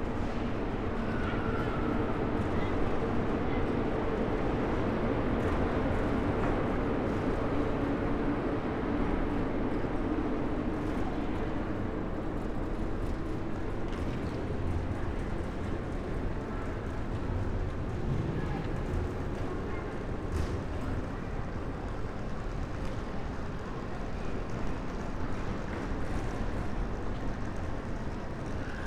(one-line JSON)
{"title": "The Squaire, Frankfurt (Main) Flughafen - airport train station, hall ambience", "date": "2021-12-23 12:15:00", "description": "place revisited\n(Sony PCM D50, Primo EM172)", "latitude": "50.05", "longitude": "8.57", "altitude": "114", "timezone": "Europe/Berlin"}